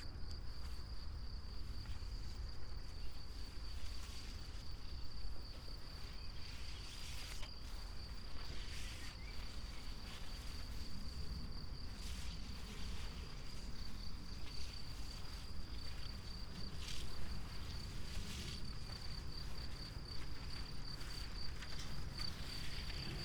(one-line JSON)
{"title": "path of seasons, Piramida, Maribor, Slovenia - silence of written words", "date": "2013-06-08 14:46:00", "description": "walk with two long strips of thin paper, covered with written words, crickets, flies, birds, wind through paper and grass ears", "latitude": "46.57", "longitude": "15.65", "altitude": "385", "timezone": "Europe/Ljubljana"}